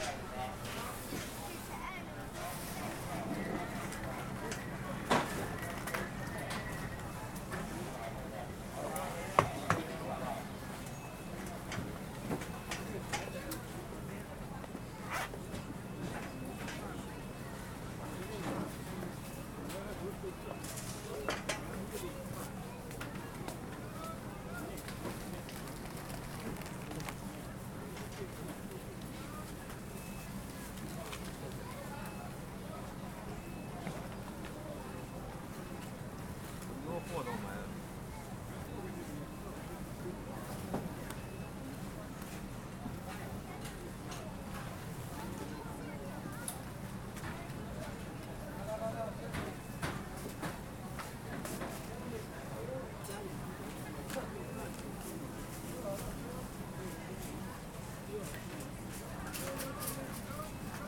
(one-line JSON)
{"title": "Flushing, Queens, NY, USA - New World Mall J Mart 1", "date": "2017-03-04 12:12:00", "description": "Standing next to the durian fruit display in the produce section of J Mart Supermarket", "latitude": "40.76", "longitude": "-73.83", "altitude": "12", "timezone": "America/New_York"}